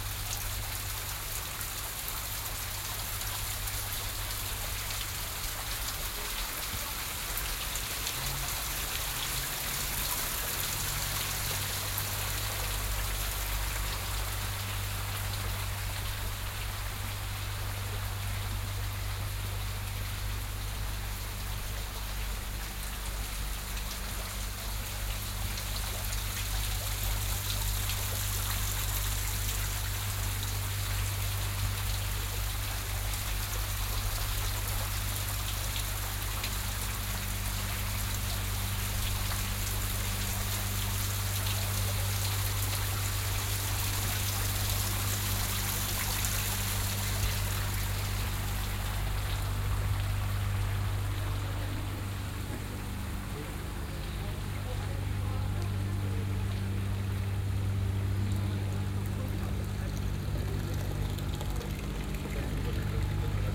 Gradierwerk, Bad Orb, Deutschland - Walk through the Gradierwerk
The Gradierwerk is a location for tourists, where they could see how until the 19th century water was treated to gain salt out of it. The water is rinsing down bushwoods in the Gradierwerk, the amount of water changes, as you can hear during the walk.